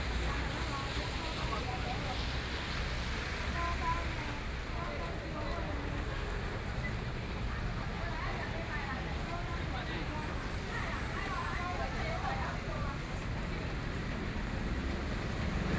Nanmen Rd., Luodong Township - traditional market
Rainy Day, in the traditional market, Zoom H4n+ Soundman OKM II
7 November 2013, ~09:00